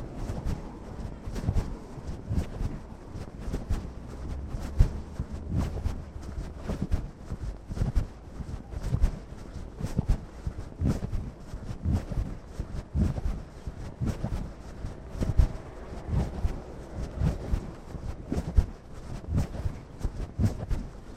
berlin: maybachufer - walking the bags: walking bag #0015 by walking hensch
walking the bags
Berlin, Germany, 1 July